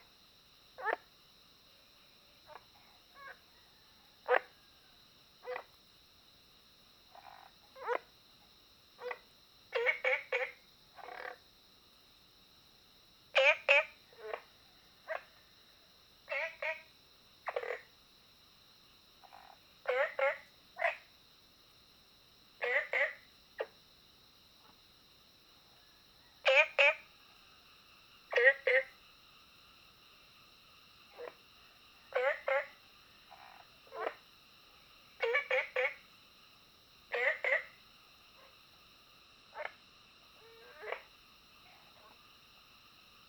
Frogs chirping, Ecological pool, Early morning, Chicken sounds
Zoom H2n MS+XY
Puli Township, Nantou County, Taiwan, 11 June, 04:35